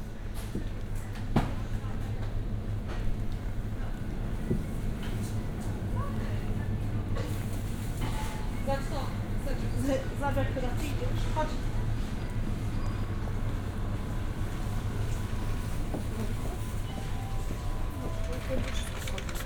Poznan, Strzeszynska street, Galeria Podolany - shopping mall ambience
(binaural recording) walking around grocery store and the shopping mall. passing by refrigerator, escalators, hairdressers, restaurants, laundry. roland r-07 + luhd PM-01 bins)
16 September 2019, ~13:00